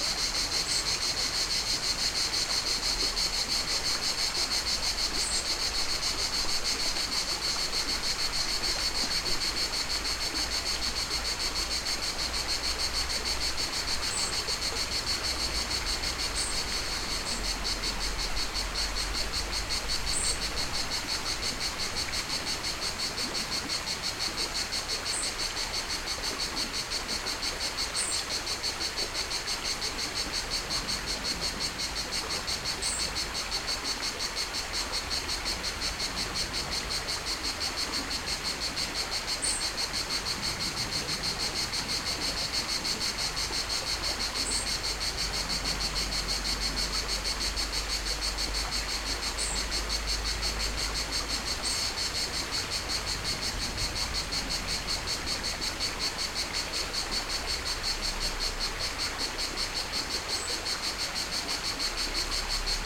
Route de Tavernes, Barjols, Frankreich - summer (lunchtime) at the creek
at the creek: cicadas, aeroplane removed, cars removed, now and then some birds.